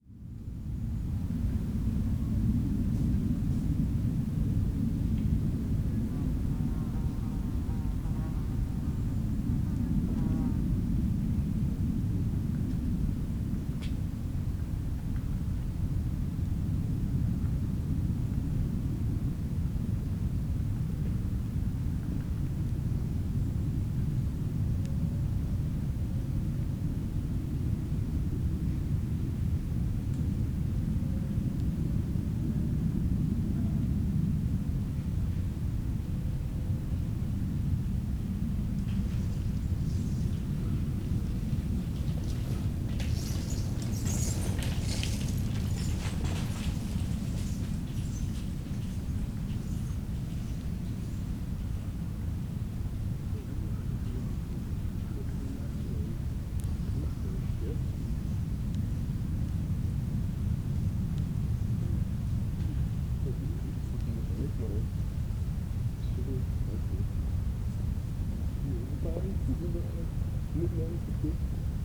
mahlow, nachtbucht/mahlower seegraben: mauerweg - borderline: berlin wall trail
a plane crossing the sky, ramblers, cyclists
borderline: october 1, 2011